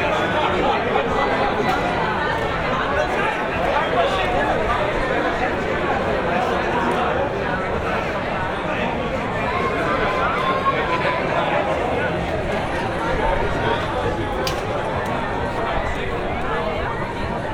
franeker: voorstraat - the city, the country & me: fair soundwalk
fair during the frisian handball tournament pc (franeker balverkaatsdag)
the city, the country & me: august 1, 2012
Franeker, The Netherlands, 1 August 2012